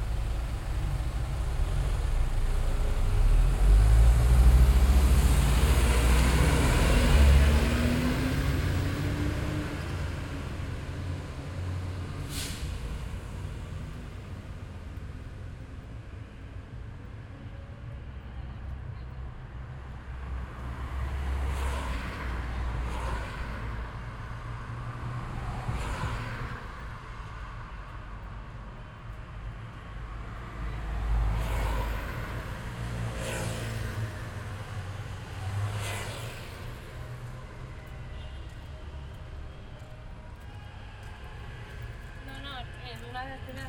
Someone singing opera and street traffic
Barcelona, Spain